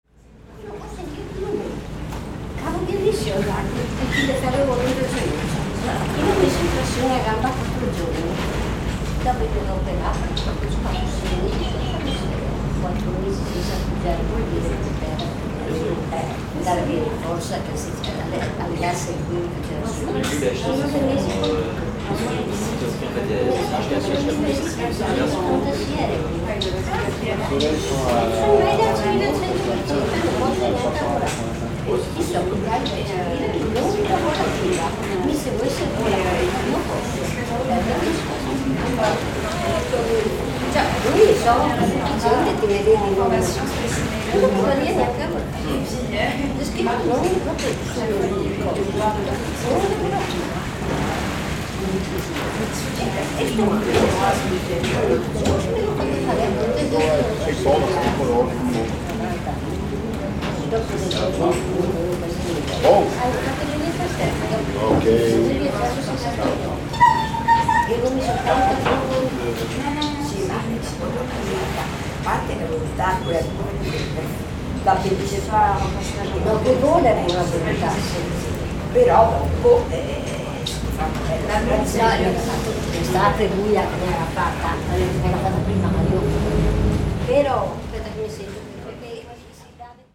Venise, Italie - Waiting zaporetto
Waiting for vaporetto at Zitelle, Venezia, Zoom H6